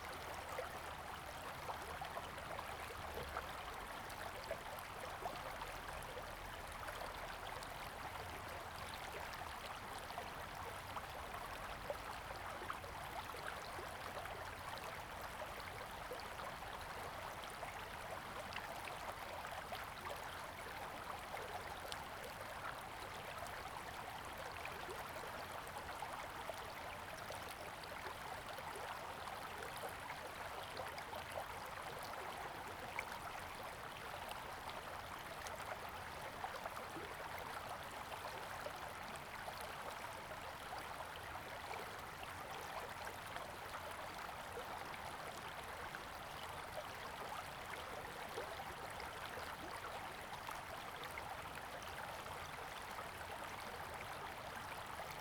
溪底田, 台東縣太麻里鄉 - Beside the river
stream, Beside the river, Bird call
Zoom H2n MS+XY